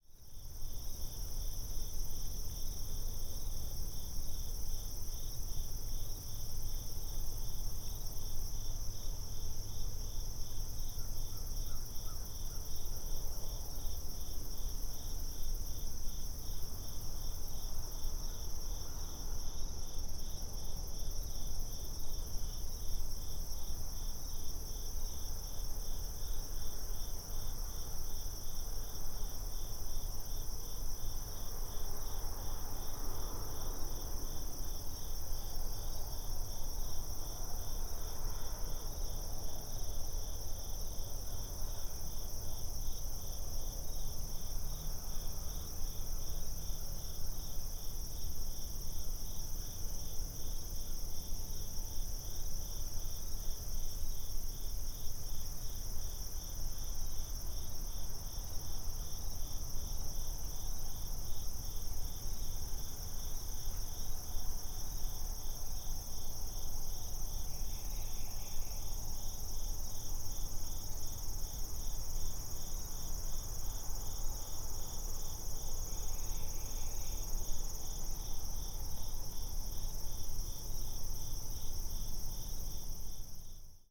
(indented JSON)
{
  "title": "Lawrence River Trail, Lawrence, Kansas, USA - Lawrence River Trail",
  "date": "2021-10-10 07:58:00",
  "description": "Ambient fall morning recording from clearing along Lawrence River Trail.",
  "latitude": "38.97",
  "longitude": "-95.20",
  "altitude": "256",
  "timezone": "America/Chicago"
}